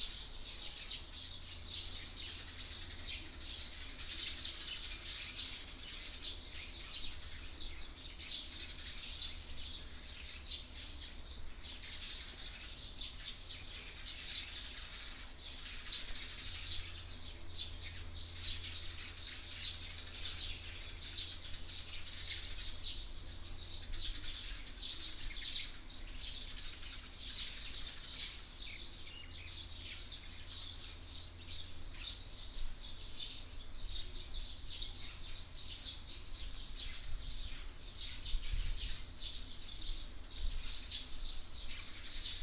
永惠廟, 壯圍鄉古亭村 - In the temple plaza
In the temple plaza, Traffic Sound, Birdsong
Zoom H6 MS+ Rode NT4